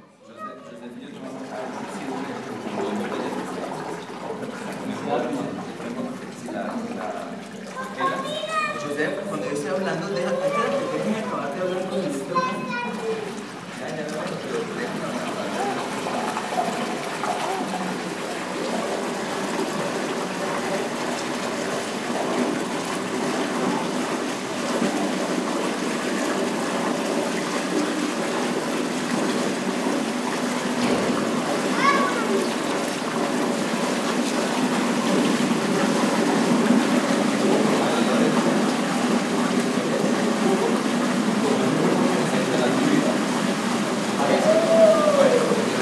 lyon - biennale d'art contemporain, redlake
13 September 2011, 17:27